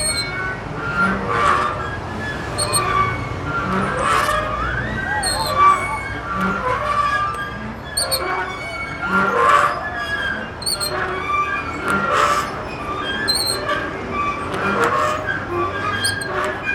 Plaza Simon Bolivar, Valparaíso, Chile - Swings squeaking in a playground on a place in Valparaiso